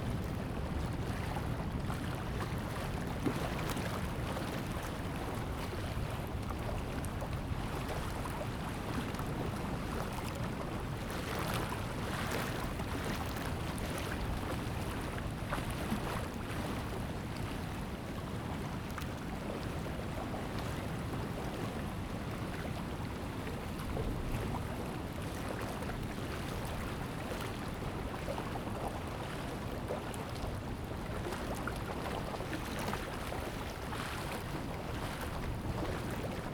靜浦村, Fengbin Township - Sound tide
Sound tide, Small pier, sound of the waves
Zoom H2n MS+XY